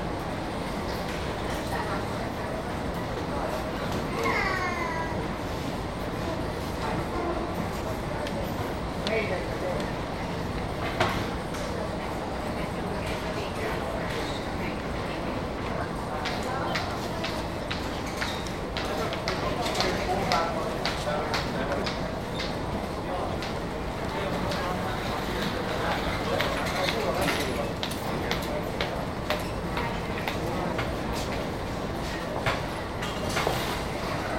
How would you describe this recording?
at the ticket office of the metro station nearbye an escelator - distant traffic noise, international city scapes and social ambiences